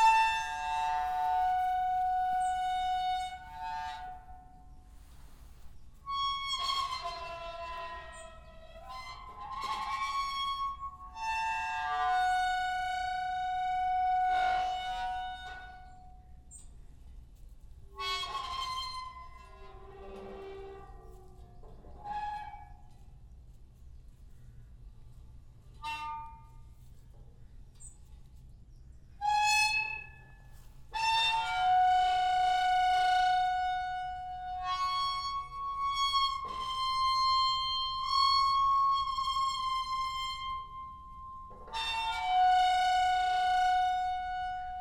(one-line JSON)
{"title": "Venables, France - Fence song", "date": "2016-09-21 06:30:00", "description": "A turning fence is making horrible noises when we are using it. So, we made a concert ! I can promise : there's no neighbour here, not even a cow, as it was still 6 AM on the morning !", "latitude": "49.20", "longitude": "1.28", "altitude": "14", "timezone": "Europe/Paris"}